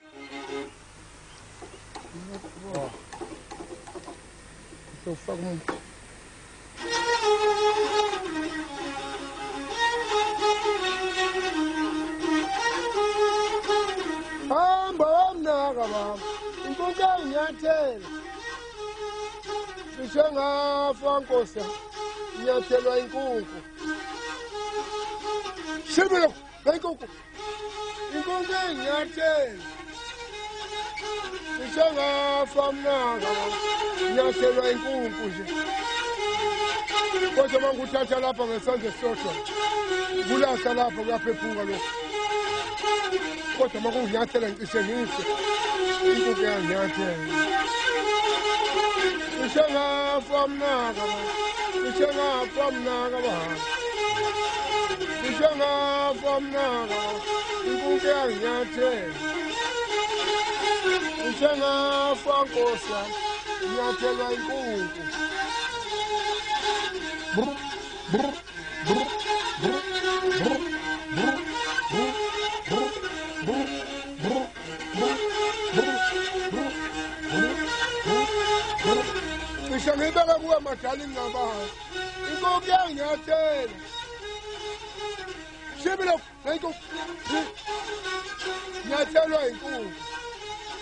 Botanic Gardens, Durban, NoMashizolo traditional street busker
NoMashizolo traditional street busker in Durban KwaZulu Natal
Berea, South Africa, April 2009